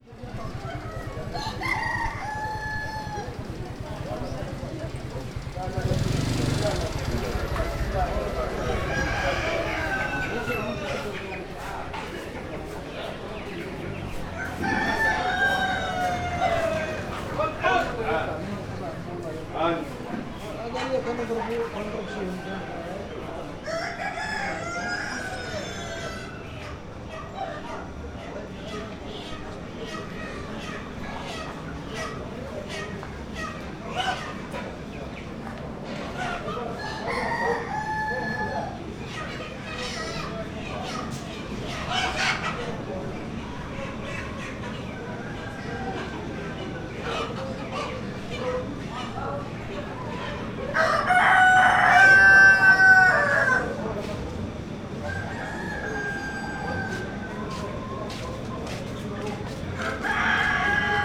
Morocco, Marrakesh, Marokko - chicken market
At the northern edge of the Djemaa el Fna in the maze of boutiques with leather sandals, cloths, crockery and argan oil there is in a small backyard, quite surprisingly, a chicken market that does not fit into the other tourist traffic. Dust from chicken feathers takes one's breath away.